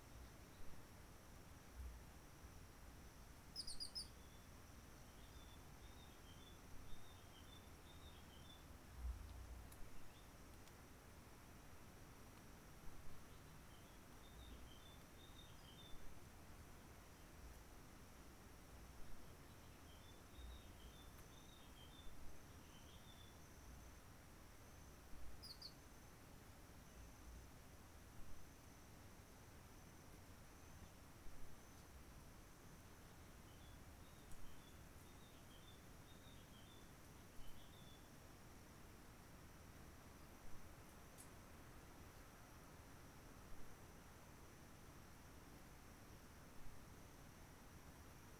Roche Merveilleuse, Réunion - CHANT DU ZOISEAU-LA-VIERGE
CHANT DU Z'OISEAU-LA-VIERGE terpsiphone de bourbon, ce chant est assez rare, il faut des heures d'enregistrement pour en avoir un
Grand Merci au virus COVID-19 pour avoir permis ce silence pour profiter de ces chants d'oiseaux pas encore totalement disparus, avec l'arrêt du tourisme par hélicoptère!
La Réunion, France, 1 April 2020